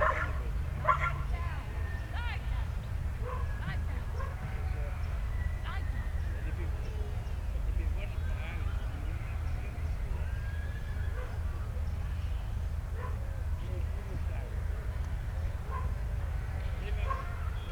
Sheepdog trials ... open lavalier mics clipped to sandwich box ... plenty of background noise ...
York, UK